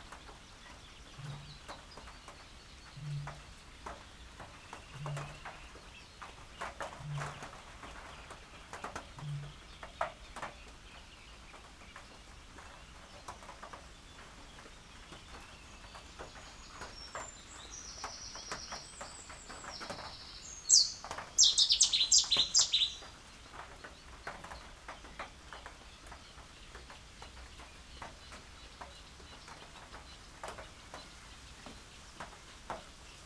Meare, UK - Rain ... on reed beds ... bird hide ... and a cetti's warbler ...
Cetti's warbler in the rain ... rain falling on an open sided bird hide in front of a reed bed ... bird calls and song from ... Cetti's warbler ... Canada geese ... wren ... reed warbler ... little grebe ... coot ... crow ... bittern ... cuckoo ... greylag geese ... open lavalier mics clipped to a sandwich box ... lots of background noise ...
England, UK